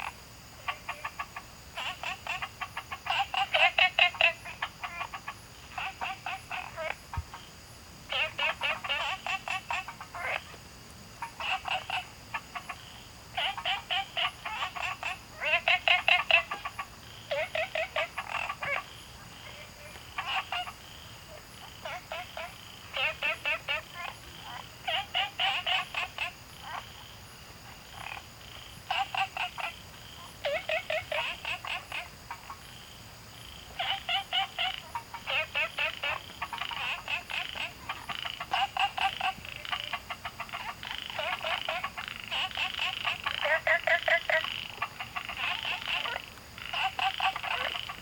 Frogs chirping, Insects called, Small ecological pool
Zoom H2n MS+XY
Puli Township, 桃米巷11-3號